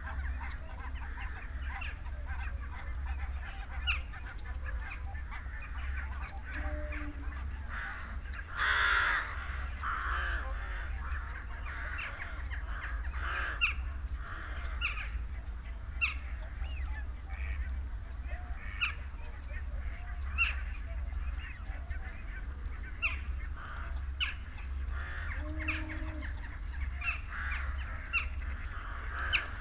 Dumfries, UK - whooper swan and jackdaw soundscape ...
whooper swan and jackdaw soundscape ... dummy head with binaural in ear luhd mics to olympus ls 14 ... bird calls from ... shoveler ... wigeon .. snipe ... lapwing ... canada teal ... blackbird ... crow ... rook ... wren ... blue tit ... great tit ... huge jackdaw flock circling from 39 mins on ... time edited unattended extended recording ...